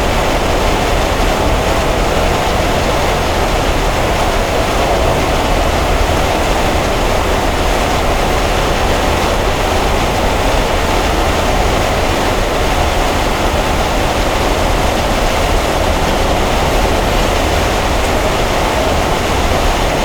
Parkkade, Rotterdam, Netherlands - Ventilation system Maastunnel
Ventilation system inside the Maastunnel. It was a very windy day, the sound of the wind moves through the ventilation system of the tunnel creating a rich noise. It is possible to hear a scooter too. Recording made with Usí pro mics.